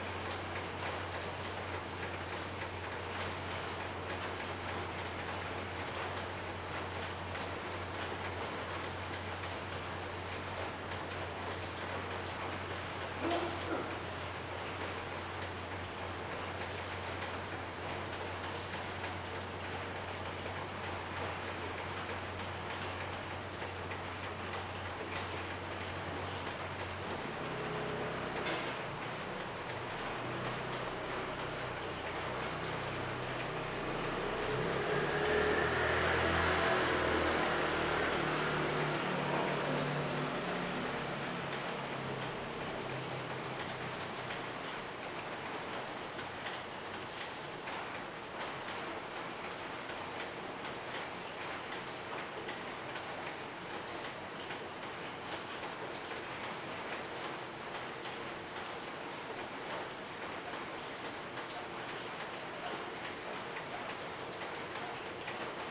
early morning, rain, raindrop, Traffic Sound
Zoom H2n MS+XY
New Taipei City, Taiwan, 27 February 2016